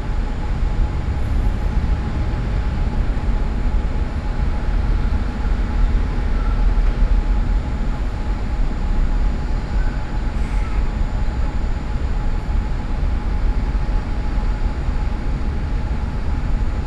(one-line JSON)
{"date": "2011-09-26 11:11:00", "description": "Brussels, Rue Dejoncker, electric buzz in the background.", "latitude": "50.83", "longitude": "4.36", "altitude": "73", "timezone": "Europe/Brussels"}